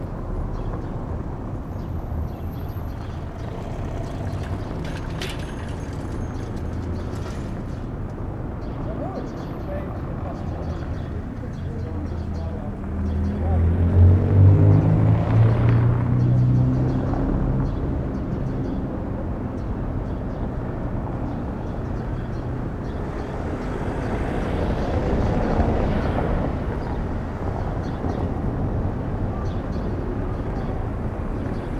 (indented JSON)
{
  "title": "Berlin: Vermessungspunkt Friedel- / Pflügerstraße - Klangvermessung Kreuzkölln ::: 30.11.2011 ::: 16:16",
  "date": "2011-11-30 16:16:00",
  "latitude": "52.49",
  "longitude": "13.43",
  "altitude": "40",
  "timezone": "Europe/Berlin"
}